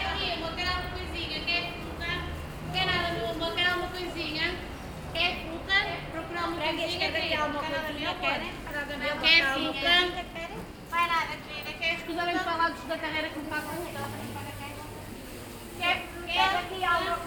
porto, mercato do bolhao - fruit sellers
fruit sellers, short soundwalk, (binaural)
Oporto, Portugal, 16 October, 10:20